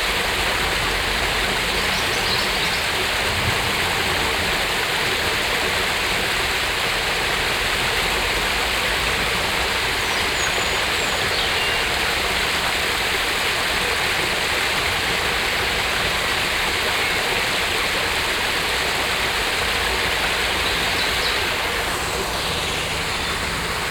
Haarzopf, Essen, Deutschland - essen, rumbachtal, rumbach
Im Landschaftsschutzgebiet Rumbachtal. Der Klang des Rumbachs an einer kleinen Brücke.
In the nature protection zone Rumbachtal. The sound of the smalll stream Rumbach at a small bridge.
Projekt - Stadtklang//: Hörorte - topographic field recordings and social ambiences
Essen, Germany, 4 June 2014